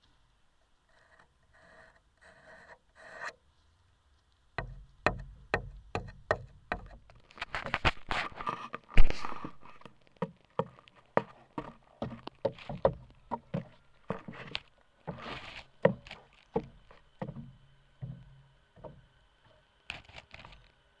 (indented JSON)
{
  "title": "Cuenca, Cuenca, España - #SoundwalkingCuenca 2015-11-19 Wooden bridge, contact mic improvisation",
  "date": "2015-11-19 13:43:00",
  "description": "Contact mic improvisation on a wooden bridge on the Júcar River, Cuenca, Spain.\nC1 contact microphones -> Sony PCM-D100",
  "latitude": "40.08",
  "longitude": "-2.14",
  "altitude": "914",
  "timezone": "Europe/Madrid"
}